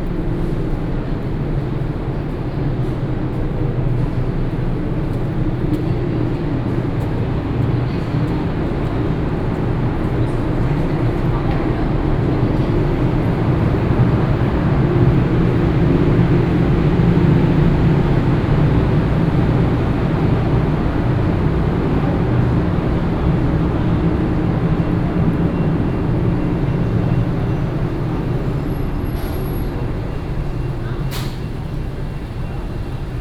Walking in the underpass, Traffic Sound, Air conditioning noise
Central District, Taichung City, Taiwan